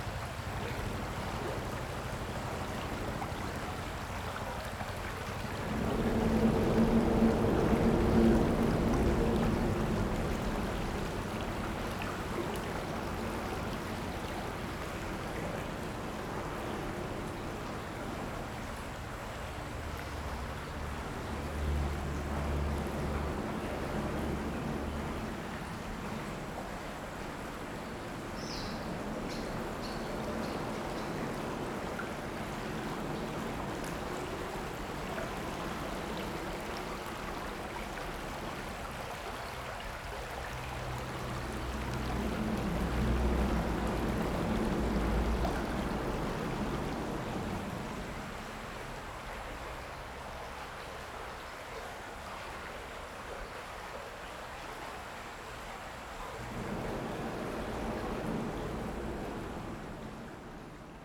Under the bridge, The sound of water, Traffic Sound
Sony PCM D50+ Soundman OKM II